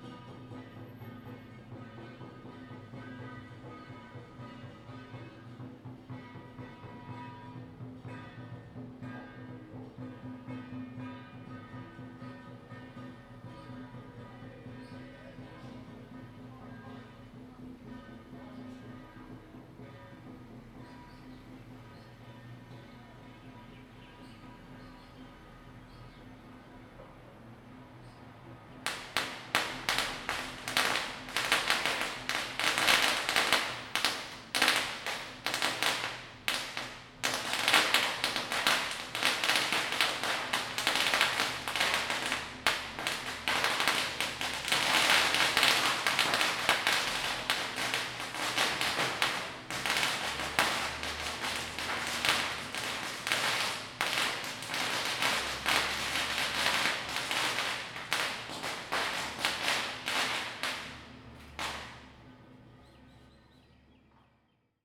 大仁街, Tamsui District - Traditional festival
Traditional festival parade, Firecrackers
Zoom H2n MS+XY
New Taipei City, Taiwan, 9 May 2015